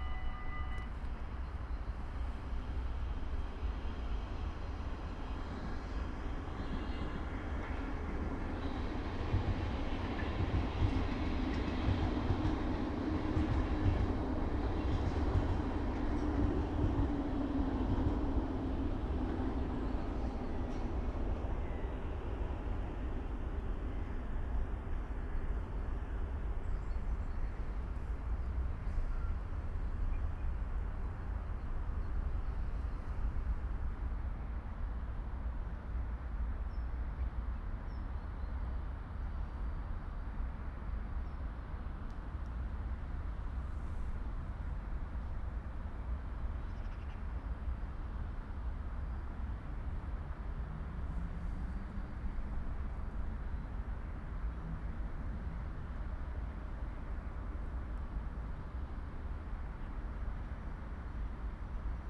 wasteland by train tracks. Passing train. ambience. Soundfield Mic (ORTF decode from Bformat) Binckhorst Mapping Project
Komeetweg, Laak, The Netherlands, 28 February, 12:35